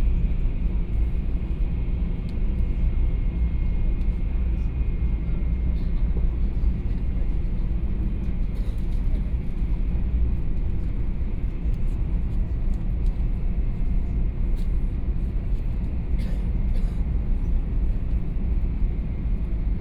Zhongli Dist., Taoyuan City, Taiwan - High - speed railway
High - speed railway, In the compartment